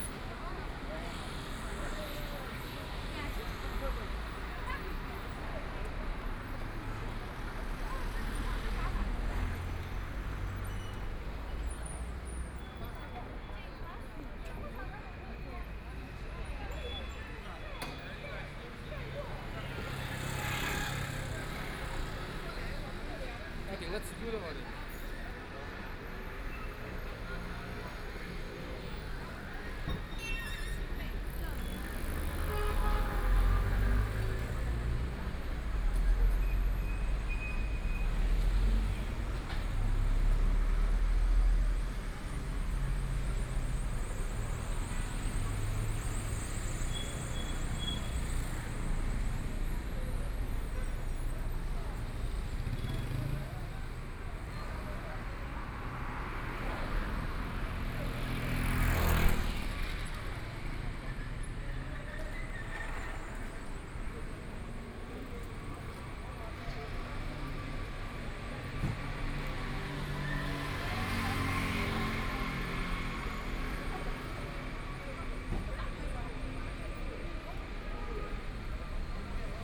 Fuxing Road, Shanghai - walking on the Road
Walking on the street, Traffic Sound, Binaural recording, Zoom H6+ Soundman OKM II